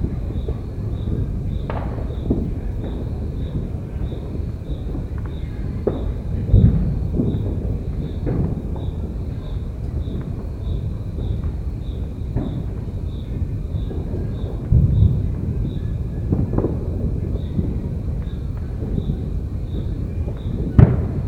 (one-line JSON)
{"title": "Linden, Randburg, South Africa - New Year Celebrations in suburban Johannesburg 2022", "date": "2021-12-31 23:56:00", "description": "New Year 2022. EM 172's on a Jecklin Disc via SLC-1 to Zoom H2n", "latitude": "-26.14", "longitude": "28.00", "altitude": "1624", "timezone": "Africa/Johannesburg"}